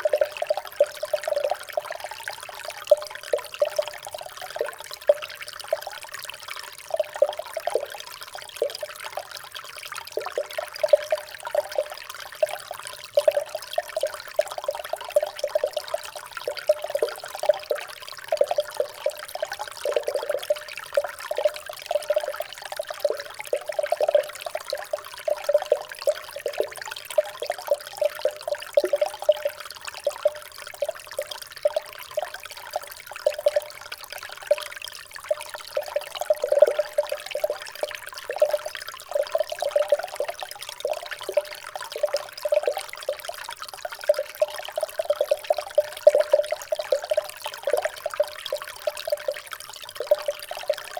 In the underground mine, water flowing in a concretion makes a strange noise falling in a small hole.
Differdange, Luxembourg, 16 April 2017